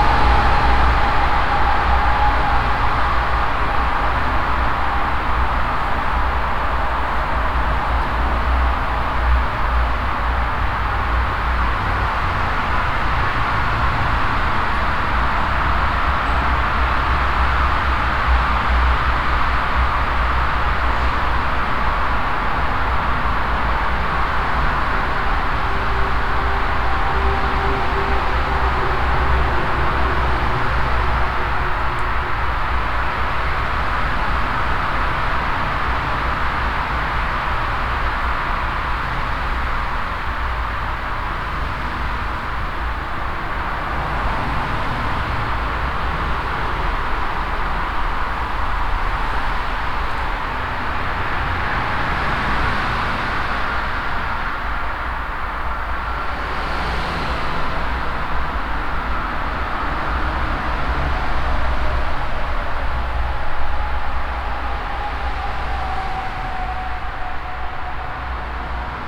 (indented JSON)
{
  "title": "Brück, Köln, Deutschland - Refrath, footway tunnel under highway A4",
  "date": "2013-07-18 15:00:00",
  "description": "Inside another narrow footway tunnel underneath the highway A4. The sound of the constantly passing by traffic that is resonating inside the concrete tube.\nsoundmap nrw - social ambiences and topographic field recordings",
  "latitude": "50.95",
  "longitude": "7.11",
  "altitude": "82",
  "timezone": "Europe/Berlin"
}